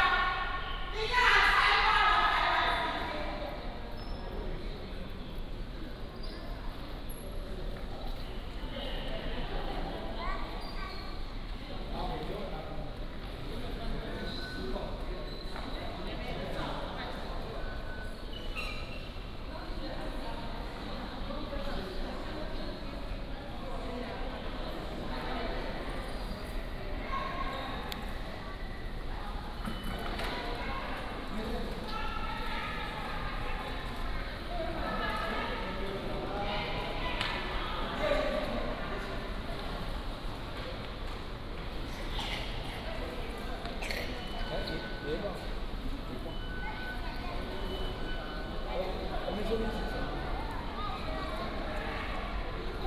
Daan Park Station, Taipei City - In the station lobby
In the station lobby